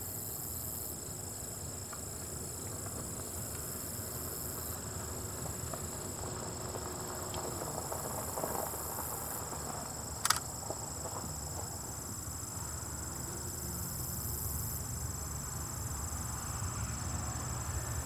Scenic, Strong City, KS, USA - insect chorus 2 tallgrass prairie preserve
22 September 2018